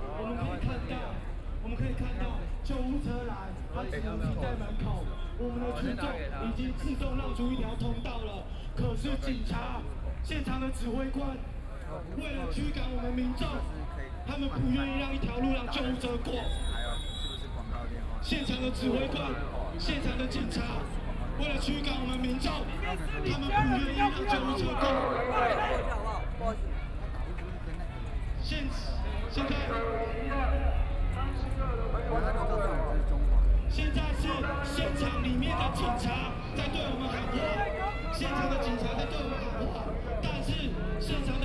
行政院, Taiwan - occupied the Executive Yuan
Student activism, Walking through the site in protest, People and students occupied the Executive Yuan
Taipei City, Taiwan, 23 March, ~22:00